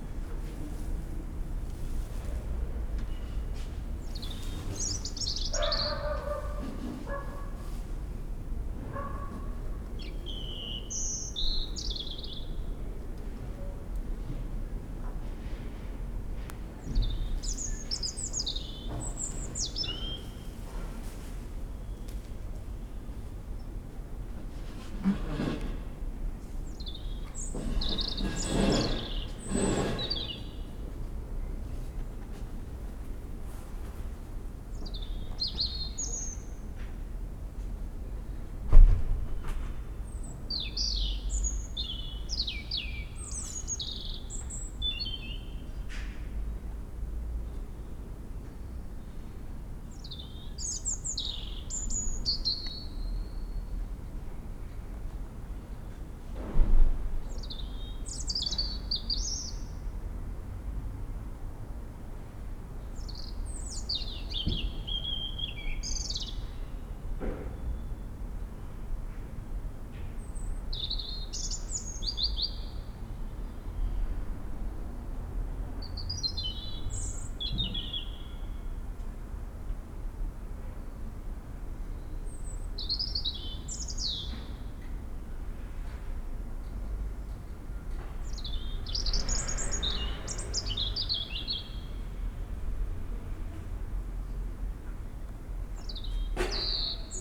A Robin in the backyard, evening ambience
(Sony PCM D50, DPA4060)
Köln, Deutschland